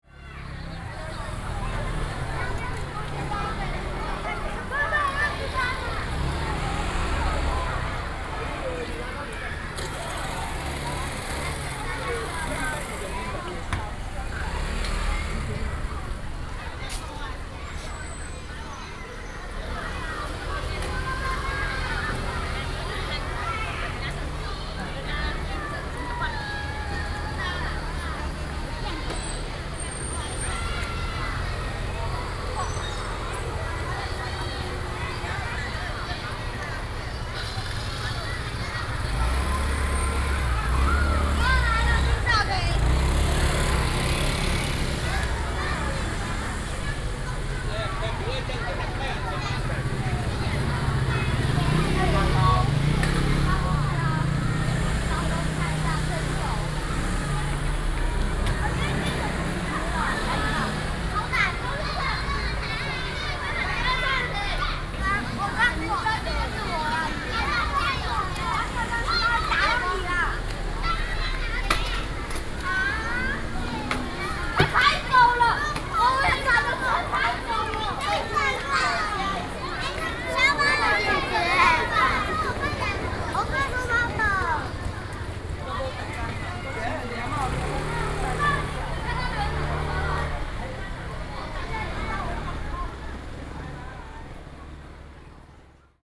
The end of the course the students leave school, Zoom H4n+ Soundman OKM II